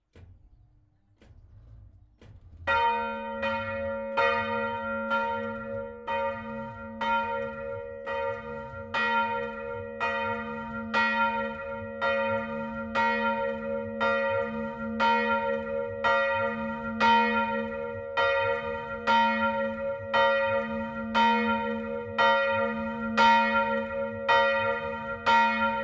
Escautpont US, Escautpont, France - Escautpont (Nord) - église St-Armand
Escautpont (Nord)
église St-Armand
Volée cloche grave
23 April 2021, 10:00